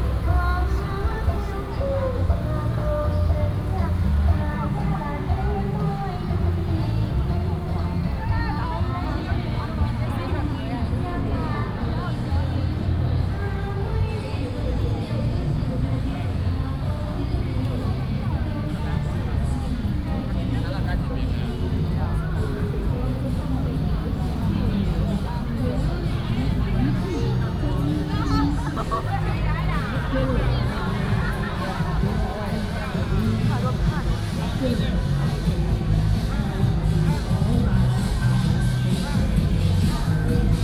Festivals, Walking on the road, Electronic firecrackers
16 August 2016, ~20:00